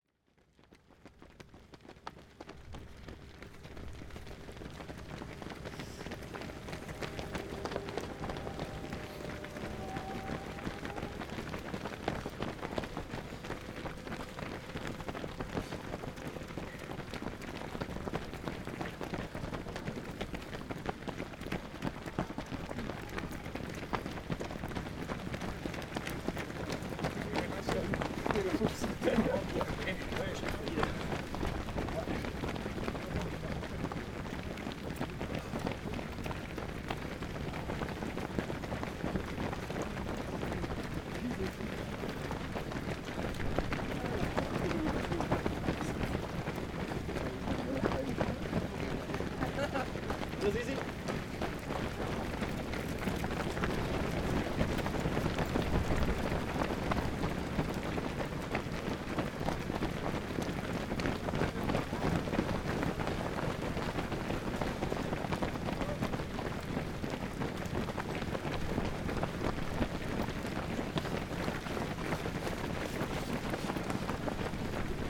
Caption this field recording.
Die Läufer des Einsteinmarathon 2014. heima®t - eine klangreise durch das stauferland, helfensteiner land und die region alb-donau